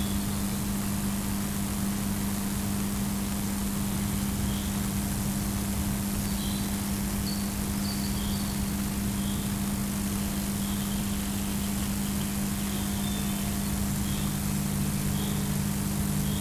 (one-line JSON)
{"title": "Unnamed Road, Московская обл., Россия - Power line crackle", "date": "2021-06-15 20:00:00", "description": "There is power line cracle sound after the rain and with some nature and other sounds like thunder, flies, birds' singing and etc...\nRecorded with Zoom H2n in MS mode", "latitude": "56.22", "longitude": "38.13", "altitude": "190", "timezone": "Europe/Moscow"}